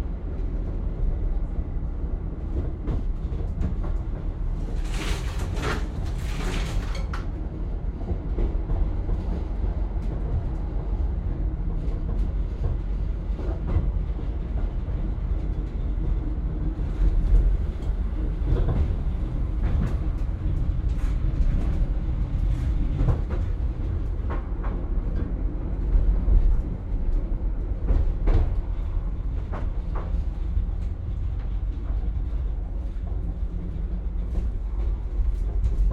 Narrow Gage Train Upper Silesia Poland
21 February 2009